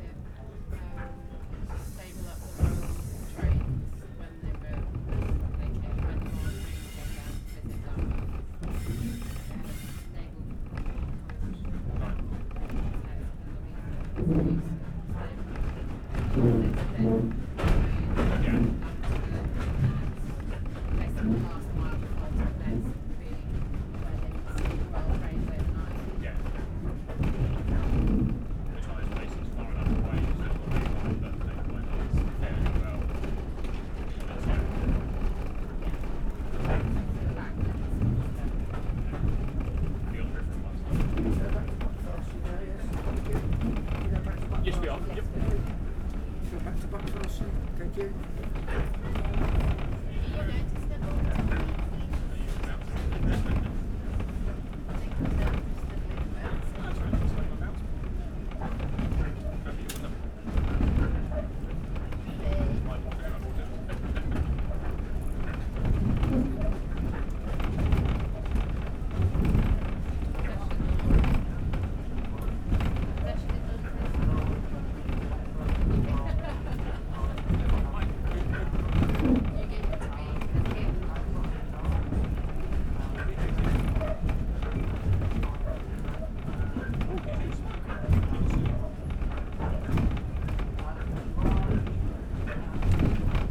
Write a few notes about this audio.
Return steam train journey between Totnes and Buckfastleigh. As with the earlier journey from Buckfastleigh, there is the sound of the creaking carriage and an occasional hoot of the engines whistle. Recorded on a Zoom H5